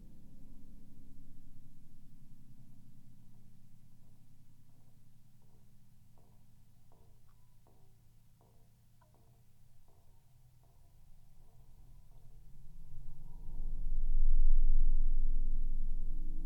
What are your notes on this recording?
Windy day. Three flagpoles at monument. Drone of a single pole captured with geophone.